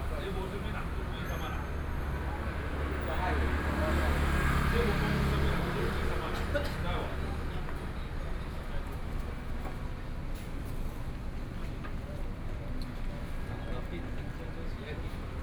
Kangle Rd., Yilan City - Coffee shop
Coffee shop on the roadside, Traffic Sound, Tourist
Sony PCM D50+ Soundman OKM II